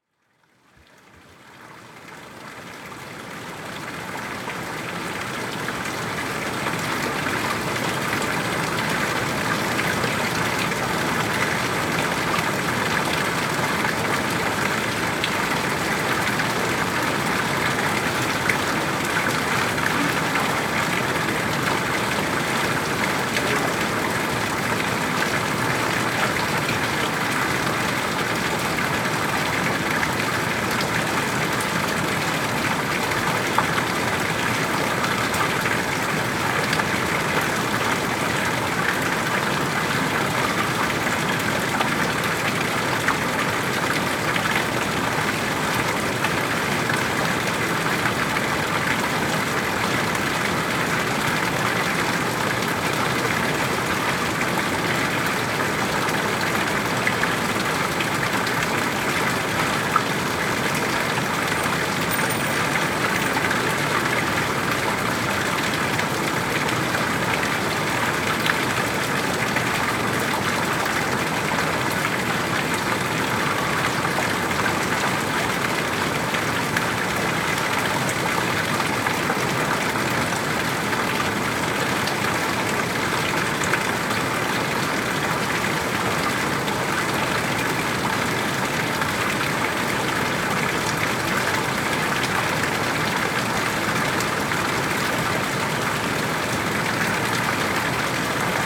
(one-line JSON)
{"title": "E 47th St, New York, NY, USA - Small waterfall in Midtown, NYC", "date": "2022-08-23 13:25:00", "description": "Sounds from a small waterfall next to the Holy Family Roman Catholic Church, Midtown, NYC.", "latitude": "40.75", "longitude": "-73.97", "altitude": "43", "timezone": "America/New_York"}